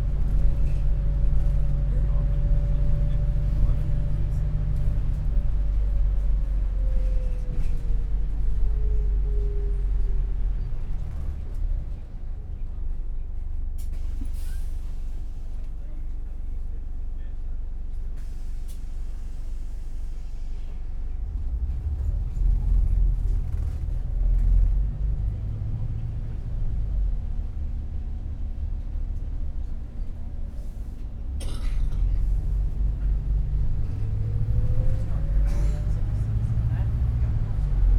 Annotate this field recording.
843 bus to Scarborough ... the 07:21 ... travelling through Seamer ... Crossgates ... walk into the towncentre ... lavalier mics clipped to hat ... all sorts of background noises ... voices etc ... recordists curse ... initially forgot to press record ...